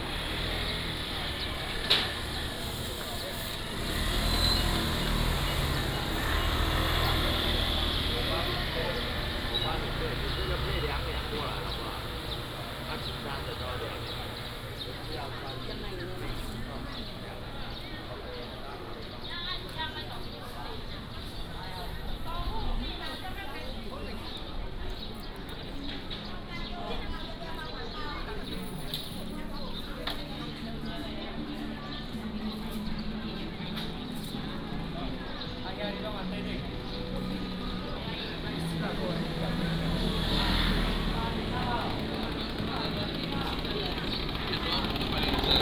Walking in the market, Traffic sound, The sound of birds, Helicopter flying through